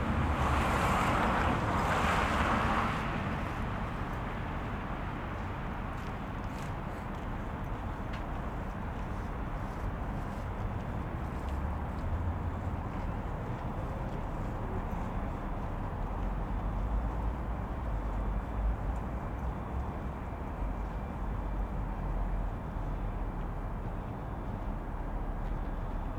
ул. Тимирязева, Челябинск, Челябинская обл., Россия - Chelyabinsk, evening, a small traffic of cars, passing people
the square in front of the drama theater, not far from the main square of the city.
Very few people on the street.